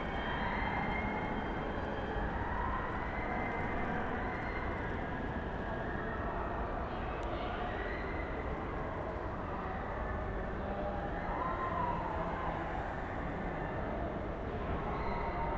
berlin, littenstr. - diffuse sound field in courtyard
diffuse sound field: echoes and reflections of the nearby christmas market fun fair. a helicopter appears at the end, maybe a sign for the increased security measures against terroristic threads this year...
Berlin, Deutschland, December 2010